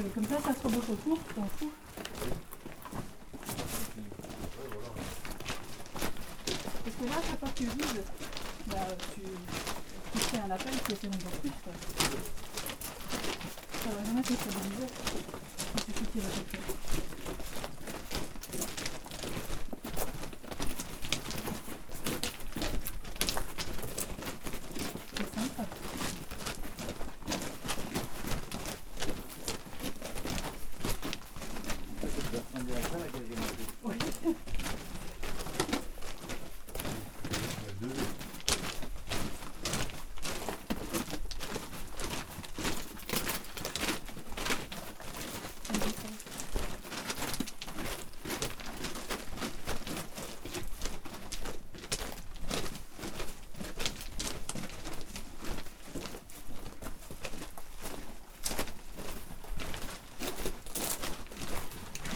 Angevillers, France - Rochonvillers mine
We are walking into the Rochonvillers underground mine, this is the main tunnel. We are crossing a place where the oxygen level is very poor. As this is dangerous, we are going fast. This is stressful. Recorded fastly while walking.
31 October, 09:30